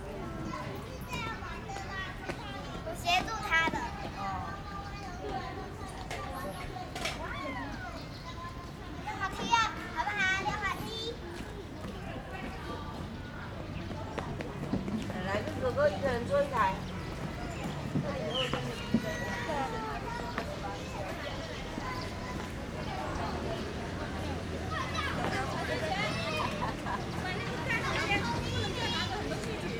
三重玫瑰公園, Sanchong Dist., New Taipei City - Children Playground
In the Park, Children Playground
Zoom H4n +Rode NT4